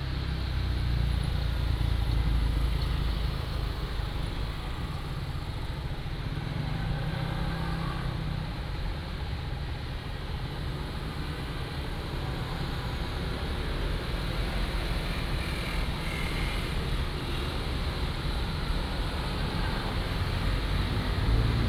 Minzu Rd., Pingtung City - Traffic Sound
Traffic Sound
Binaural recordings
Sony PCM D100+ Soundman OKM II
Pingtung County, Taiwan, 31 October